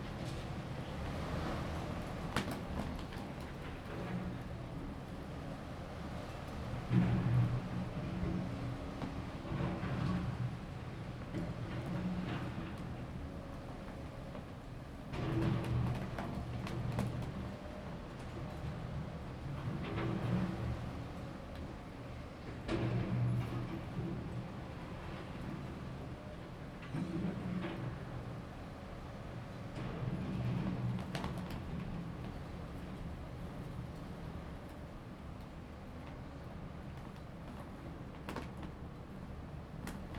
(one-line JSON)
{"title": "鐵線里, Magong City - Wind and Banner", "date": "2014-10-23 12:37:00", "description": "Wind and Banner, At bus stop, Close to being dismantled prescription\nZoom H2n MS+XY", "latitude": "23.53", "longitude": "119.60", "altitude": "4", "timezone": "Asia/Taipei"}